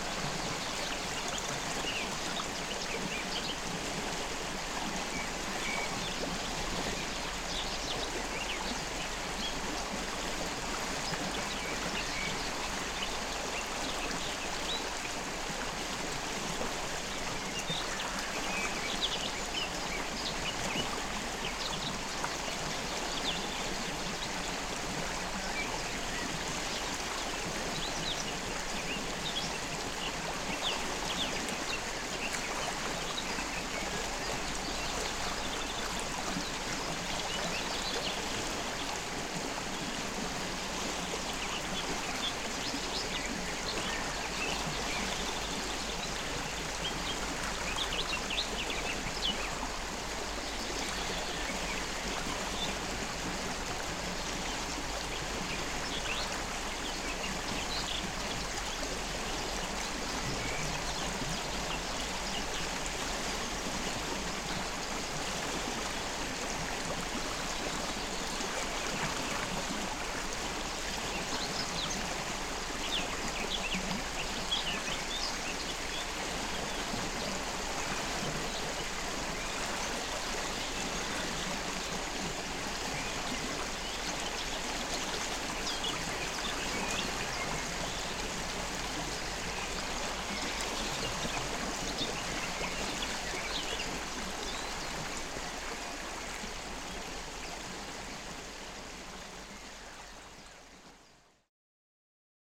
Lithuania, river Sventoji
the spot where river Sventoji meets with river Vyzuona